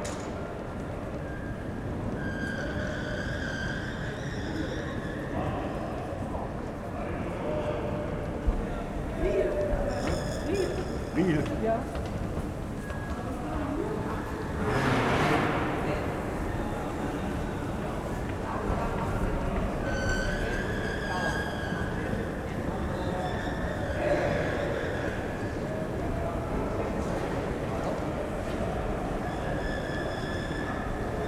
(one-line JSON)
{"title": "HBF Köln - singing escalator", "date": "2011-01-30 23:40:00", "description": "sunday night, Cologne main station, singing escalator, people going down to the subway", "latitude": "50.94", "longitude": "6.96", "altitude": "58", "timezone": "Europe/Berlin"}